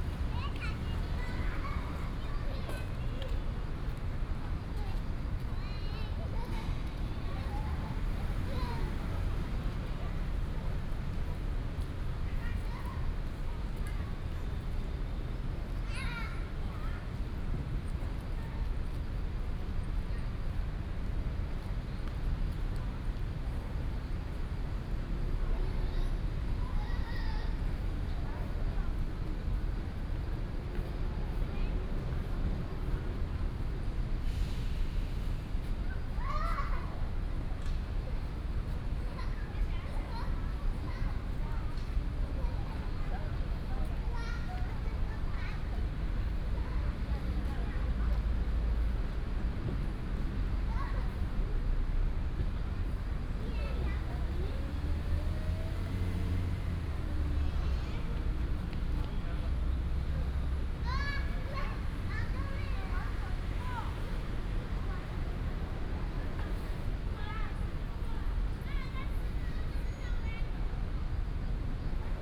in the Park
Please turn up the volume a little. Binaural recordings, Sony PCM D100+ Soundman OKM II
Zhongzheng District, Taipei City, Taiwan, 1 August 2015